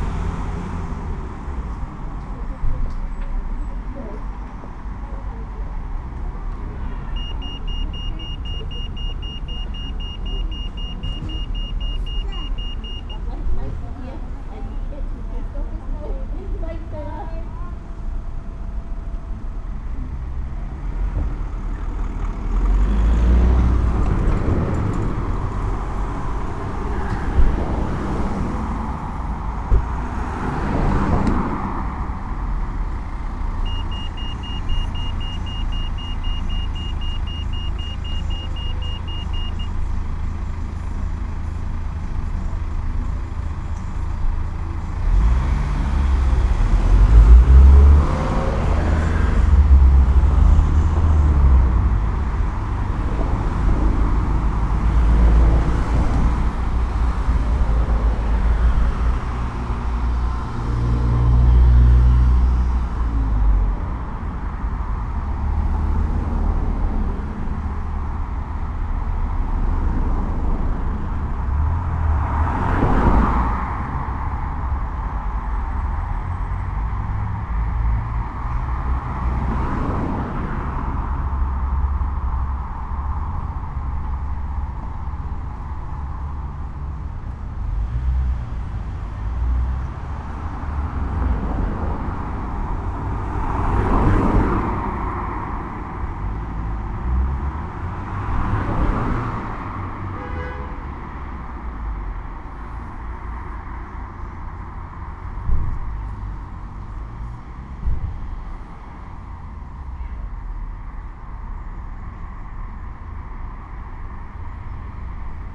Pedestrian Crossing and Fire Engine, Malvern, UK
A quiet wet day. A fire engine suddenly passes then Belle Vue Terrace returns to normal traffic, the sound of the crossing tone and general ambient audio.
I recorded this by placing my rucksack with the recorder and mics on the footpath at the base of the crossing sign and hoped something would happen.
MixPre 6 II with 2 Sennheiser MKH 8020s.
Worcestershire, England, United Kingdom, 30 September